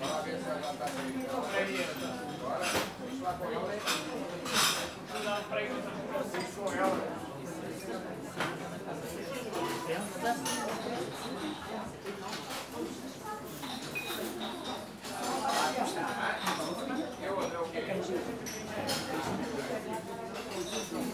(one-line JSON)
{"title": "Losbon, Rua Augusta, Baixa district - around lunch time in a coffee house", "date": "2013-09-06 12:21:00", "description": "very busy coffee house/bakery/patisserie during lunch time. packed with locals having light meals and coffee at the counter. others just dropping by to pick up cake orders. plenty of adrift tourist, not really knowing what to order, discussing options.", "latitude": "38.71", "longitude": "-9.14", "altitude": "22", "timezone": "Europe/Lisbon"}